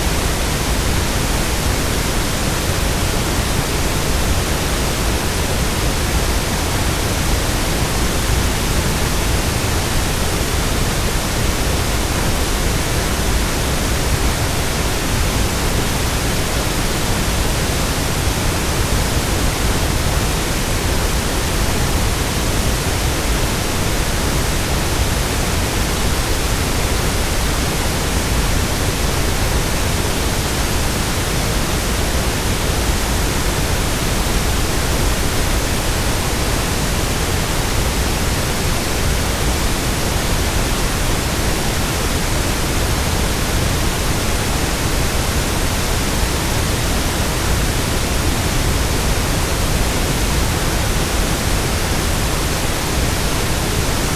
Rte des Bauges, Cusy, France - Grondements du Chéran
Les grondements du Chéran au barrage de Banges .
Auvergne-Rhône-Alpes, France métropolitaine, France, June 2004